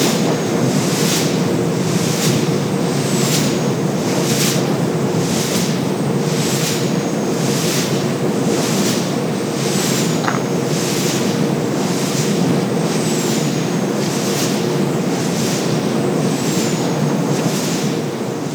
Umeå. Holmsund wind turbine
Wind turbine #1